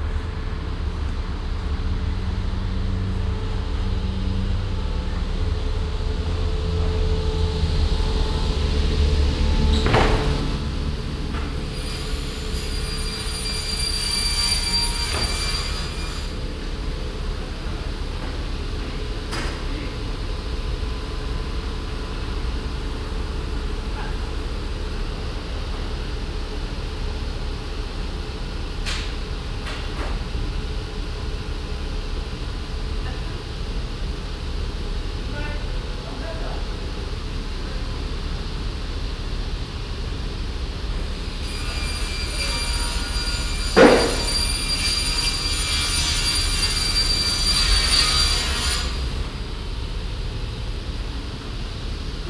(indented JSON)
{
  "title": "haan, böttinger str, fa mohr, holzzuschnitt",
  "latitude": "51.19",
  "longitude": "7.00",
  "altitude": "136",
  "timezone": "GMT+1"
}